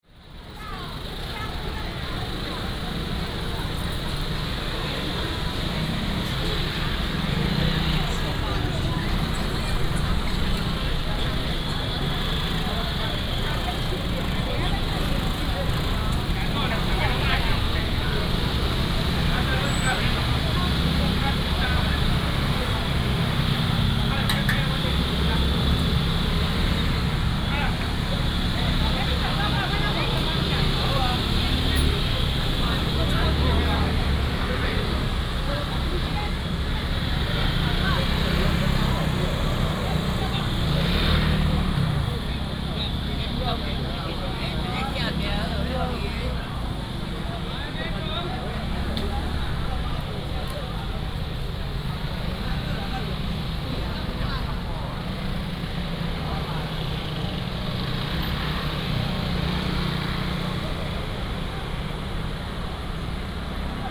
Xinyi Rd., Shengang Township - Traditional market
Walking in the market, Traffic sound, Vendors, motorcycle